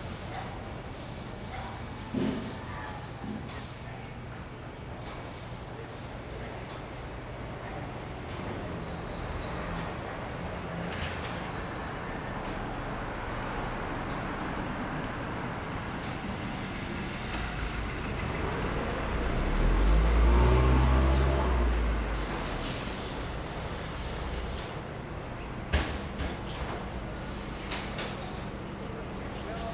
Ln., Wuhua St., Sanchong Dist., New Taipei City - In the alley
In the alley
Rode NT4+Zoom H4n
New Taipei City, Taiwan, 15 March